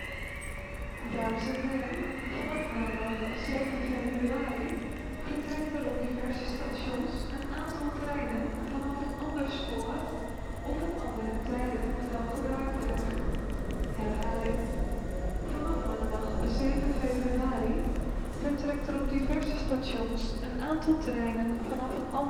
Station hall, Den Haag Centraal, sirens test
The Hague, The Netherlands, 7 February 2011